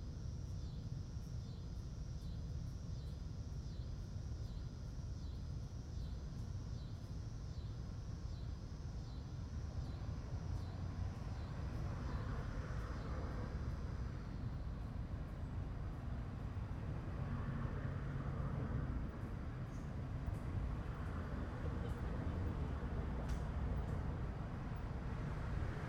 Portage Park, Chicago, IL, USA - Summer evening soundscape in Jefferson Park, Chicago
Cicadas, traffic, planes and kids are audible in this summer evening soundscape from the Jefferson Park neighborhood, Chicago, Illinois, USA.
2 x Audio Technica AT3031, Sound Devices 302, Tascam DR-40.
22 July 2012, ~21:00